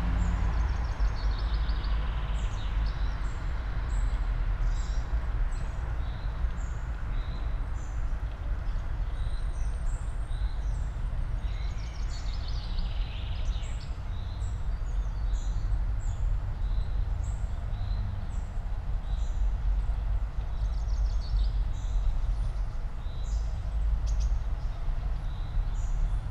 all the mornings of the ... - jun 17 2013 monday 07:08